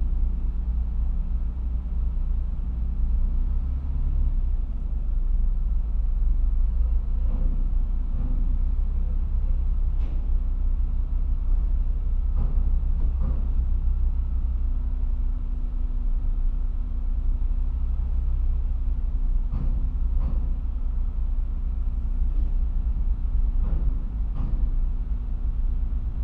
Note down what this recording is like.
Kennecraig to Port Ellen ferry to Islay ... disembarking ... lavalier mics clipped to baseball cap ...